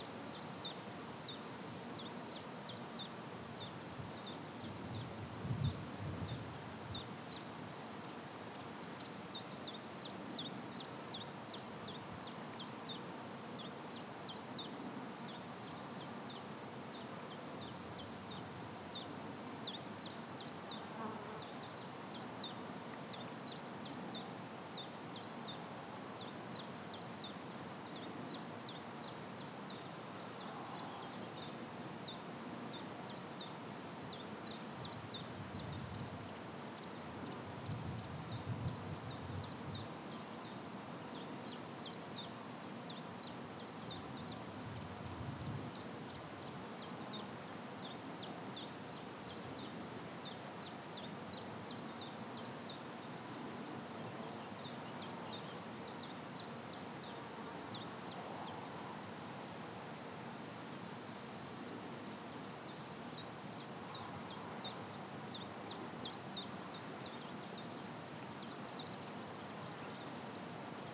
An Sanctoir, Bawnaknockane, Ballydehob, Co. Cork, Ireland - Soundwalk at An Sanctóir on World Listening Day 2021
To celebrate World Listening Day, an annual event since 2010, a soundwalk was organized in the secluded nature reserve at An Sanctóir in the heart of West Cork. Seven participants took their ears for a walk and enjoyed a beautiful afternoon.